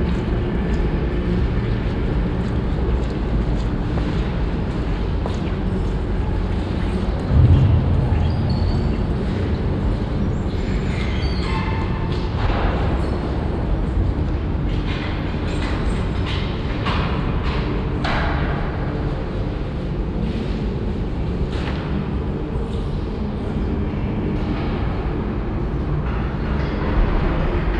Central Area, Cluj-Napoca, Rumänien - Cluj, orthodox Cathedral
Inside the orthodoy cathedral. The sounds of the queeking wooden doors, steps and coughing of visitors in the wide open stone hall and on the wooden steps, a mysterical melody, later in the background the sound of a religious ceremony choir coming from the caverns of the building.
international city scapes - topographic field recordings and social ambiences